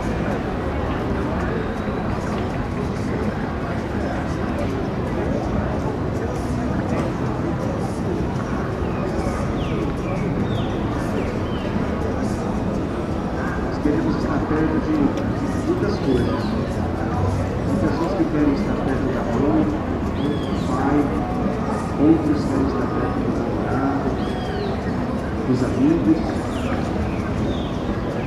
Calçadão de Londrina: Músico evangelizador: Praça Willie Davids - Músico evangelizador: Praça Willie Davids / Evangelizing Musician: Willie Davids Square
Panorama sonoro: músico com violão na Praça Willie Davids pregava e cantava músicas evangélicas com auxílio de uma caixa de som instalada em uma bicicleta e microfone. Ao entorno, caixas de som em lojas emitiam músicas diversas, pessoas transitavam pela praça, veículos circulavam pelas ruas próximas e um pássaro engaiolado cantava.
Sound panorama: musician with guitar in Willie Davids Square preached and sang gospel music with the aid of a sound box mounted on a bicycle and microphone. In the surroundings, loudspeakers in stores emitted diverse music, people traveled through the square, vehicles circulated in the nearby streets and a caged bird sang.